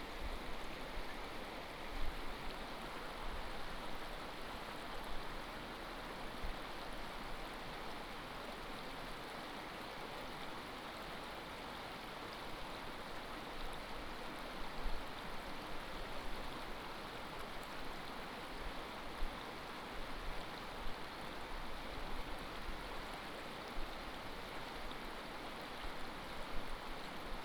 太麻里溪, 台東縣金峰鄉 - Stream sound
Stream sound
Binaural recordings
Sony PCM D100+ Soundman OKM II